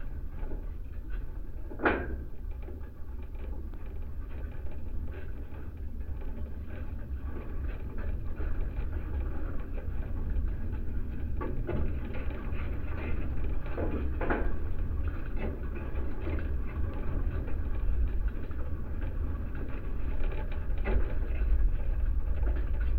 contact mis on a fragment of metallic fence...windless day...
Utena, Lithuania, 15 December 2018